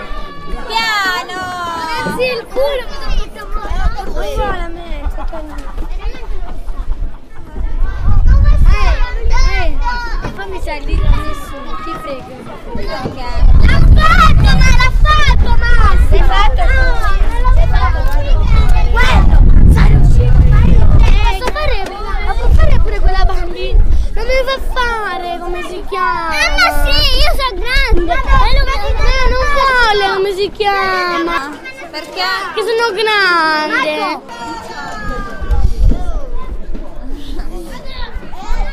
Taranto, città vecchia, costruzione park Urka di LABuat - Taranto, Voci Parco Giochi LABuat